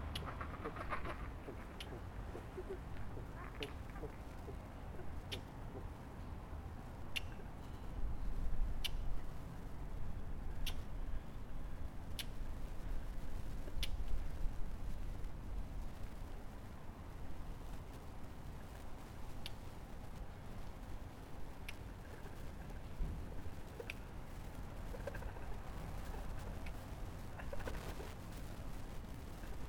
Fjellet Sør, Bergen, Norway - Activating Public Space
30 August 2012, ~18:00